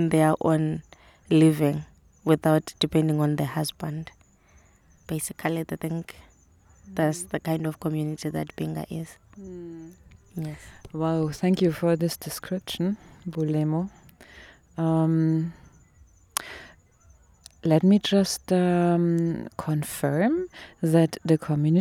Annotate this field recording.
Bulemu works in a local Supermarket and is currently the breadwinner for her family... here she describes for us how we can imagine the life of young women in Binga to look like... By now Bulemu, is working as a volunteer in a kita in Bielefeld... she is one of 16 young people from the global Souths to participate in a South – North exchange programme at Welthaus Bielefeld in Germany...